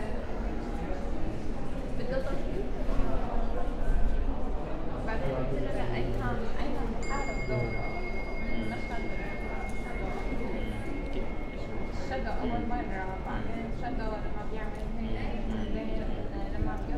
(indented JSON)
{
  "title": "Headington Rd, Oxford, UK - Forum Meditation",
  "date": "2017-09-14 11:30:00",
  "description": "A short 10 minute meditation in the study area on the mezzanine floor above the cafe at the Headington campus of Oxford Brookes University. (Sennheiser 8020s either side of a Jecklin Disk to a SD MixPre6)",
  "latitude": "51.75",
  "longitude": "-1.22",
  "altitude": "101",
  "timezone": "Europe/London"
}